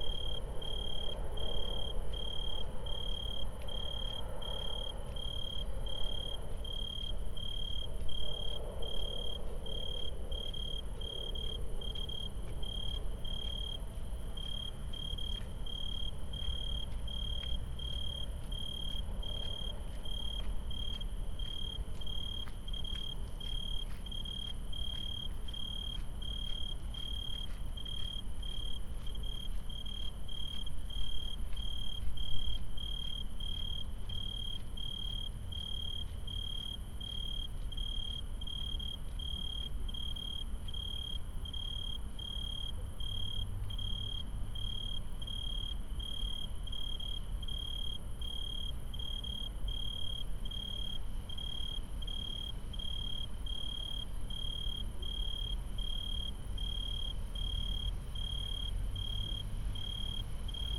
Mediapark, Köln - trains and tree crickets
(Tascam iXJ2 / iphone / Primo EM172)